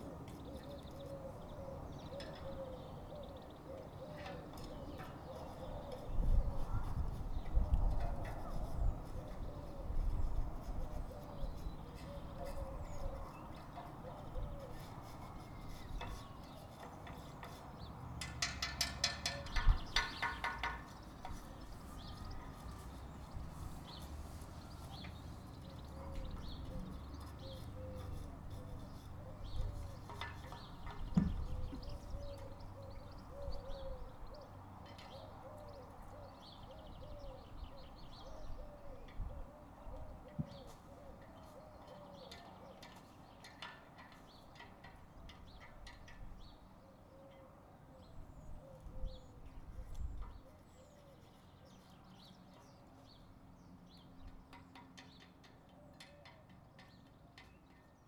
recorded from an upstairs window as neighbour scraped clean an iron gate for re-painting
Cambridgeshire, UK, 21 May 2007, ~15:00